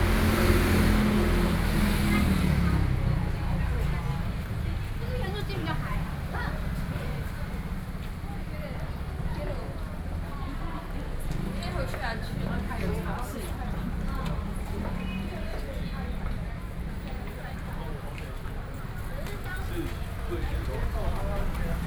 Walking on the streets, Various shops, Traffic Sound
Binaural recordings, Sony PCM D50
Taipei City, Taiwan, 12 October